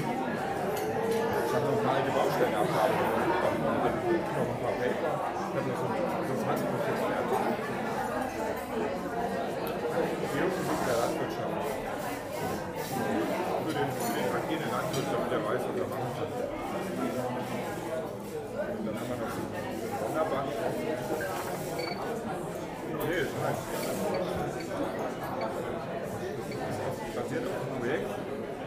café april, berlin
recorded nov 15th, 2008.